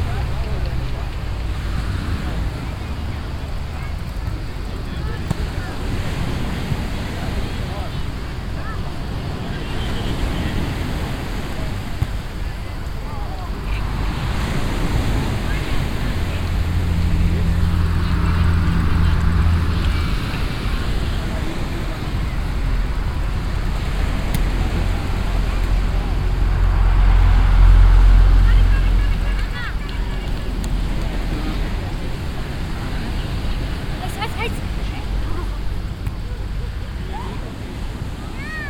varigotti, via aurelia, beach
atmosphere at a private beach in the afternoon, traffic passing by, ball games, the tickling of the sweet water shower, the drift of the sea
soundmap international: social ambiences/ listen to the people in & outdoor topographic field recordings
28 July 2009